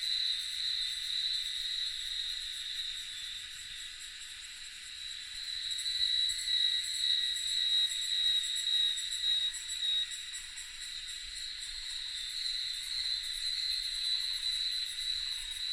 水上巷桃米里, Puli Township - Cicadas and Bird sounds
Cicadas cry, Bird sounds
Nantou County, Puli Township, 華龍巷164號